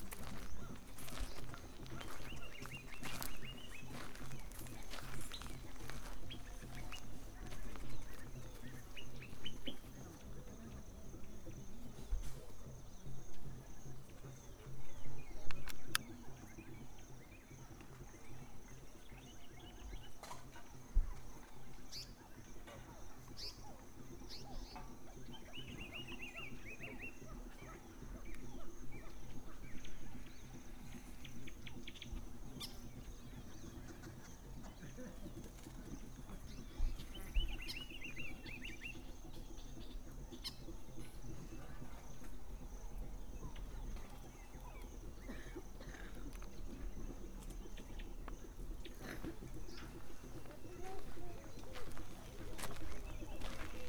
Kavango, Namibia - morning drums and birds on the Okavango river shore
Drums in a village close to the Okavango River, close to the Ngepi Camp, they played all night long and they are still playing at dawn.